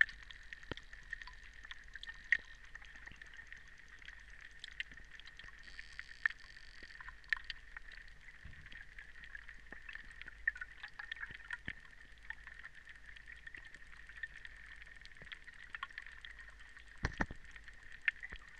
some pond at the edge of the town and near the road - you can hear cars passing by